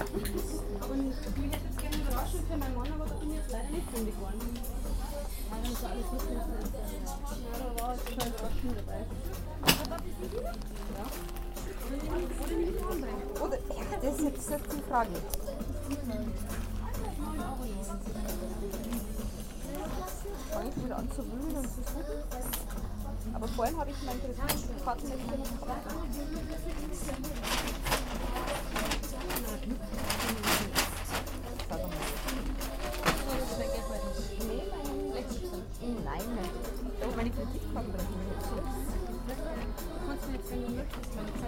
clothes shop in the main station mall. recorded june 6, 2008. - project: "hasenbrot - a private sound diary"